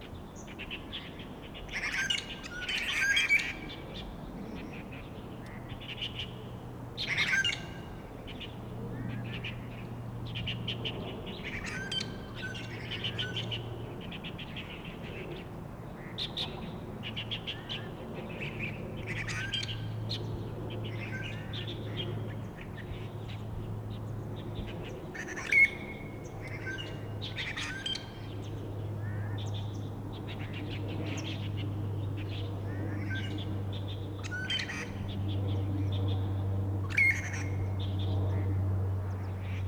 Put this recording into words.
Outside prison, Bird calls, Aircraft flying through, Traffic Sound, Zoom H4n + Rode NT4